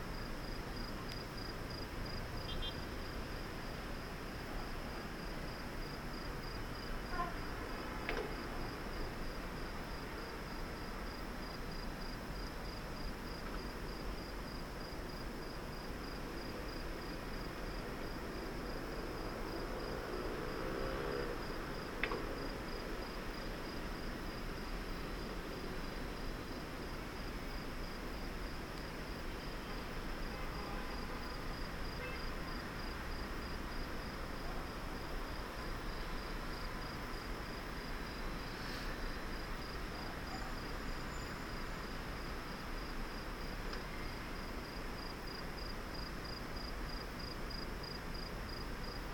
Menashe Ben Israel St, Jerusalem, Israel - Old Graveyard in Jerusalem
Old Graveyard in Jerusalem, Evening time
מחוז ירושלים, ישראל, 24 November 2019